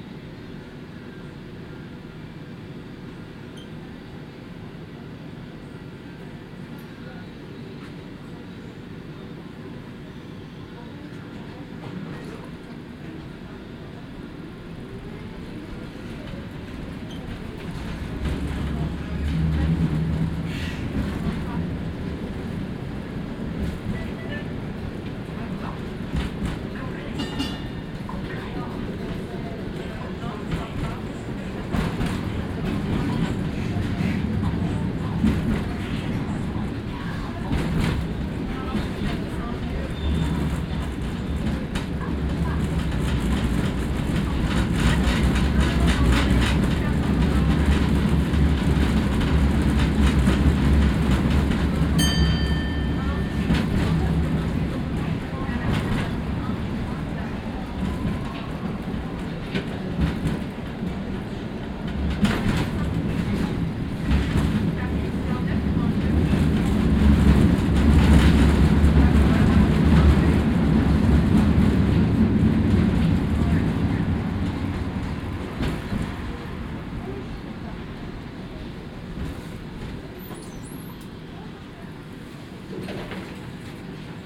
Rue Royale, Bruxelles, Belgique - Tram 93 binaural
Old model tram, lot of vibrating sounds.
Tech Note : SP-TFB-2 binaural microphones → Sony PCM-M10, listen with headphones.